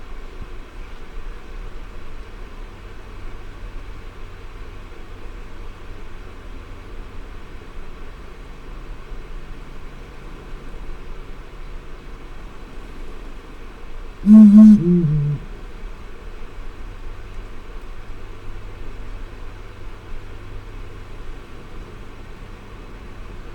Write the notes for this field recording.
Blakiston's fish owl ... three birds present ... the calls are a duet ... male 1 3 ... female 2 4 ... or male 1 2 ... female 3 4 ... at 05:10 one bird flies off and the separate parts of the duet can be heard ... extremely cold and frequent snow showers ... Teling ProDAT 5 to Sony Minidisk ... just so fortunate to record any of this ...